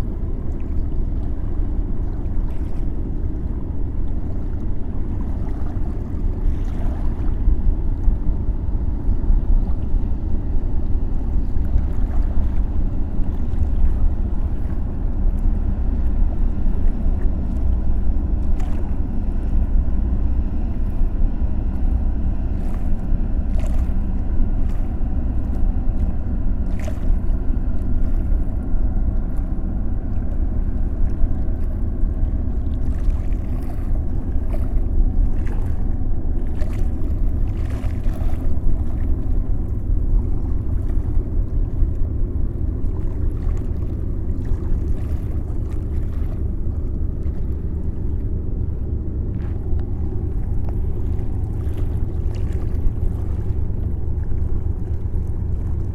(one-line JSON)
{"title": "Fatouville-Grestain, France - Aurilia boat", "date": "2016-07-21 12:10:00", "description": "An enormous boat (a supertanker called Aurila), is passing by on the Seine river. It comes from Liberia.", "latitude": "49.43", "longitude": "0.32", "timezone": "Europe/Paris"}